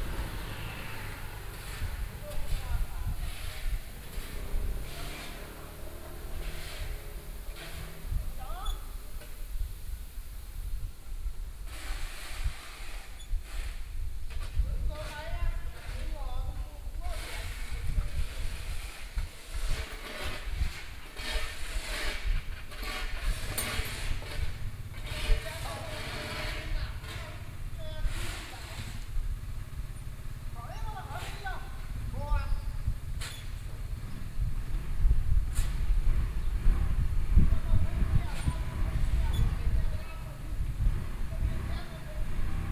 Fill pavement engineering, Binaural recordings, Sony PCM D50 + Soundman OKM II
Taipei City, Taiwan, 28 October 2013